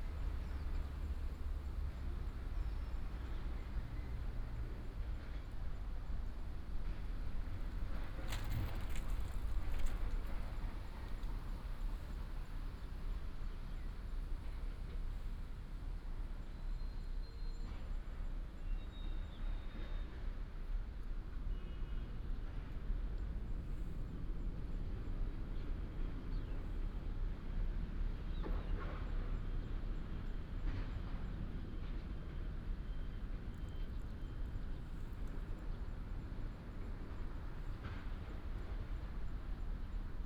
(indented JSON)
{
  "title": "中華路, Dayuan Dist., Taoyuan City - Nobody in the basketball court",
  "date": "2017-08-18 15:55:00",
  "description": "Nobodys basketball court, traffic sound, birds sound, The plane flew through, Binaural recordings, Sony PCM D100+ Soundman OKM II",
  "latitude": "25.07",
  "longitude": "121.20",
  "altitude": "21",
  "timezone": "Asia/Taipei"
}